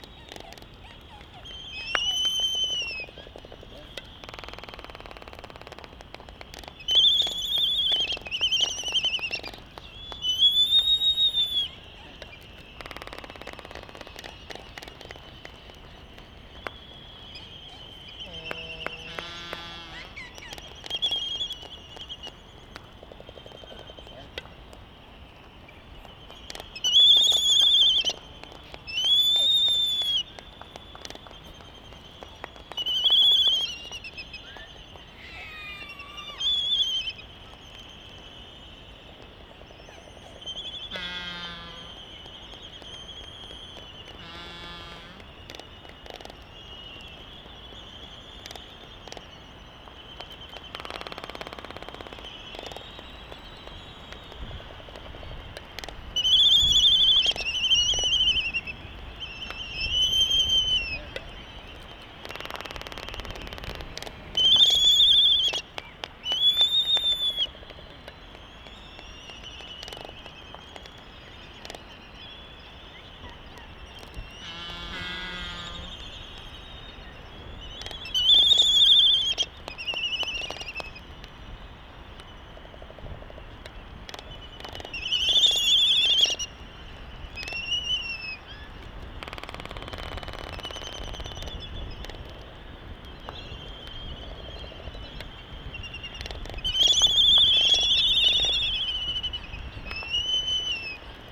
Laysan albatross dancing ... Sand Island ... Midway Atoll ... calls and bill clapperings ... Sony ECM 959 single point stereo mic to Sony Minidisk ... warm ... sunny ... blustery morning ...
United States, 27 December 1997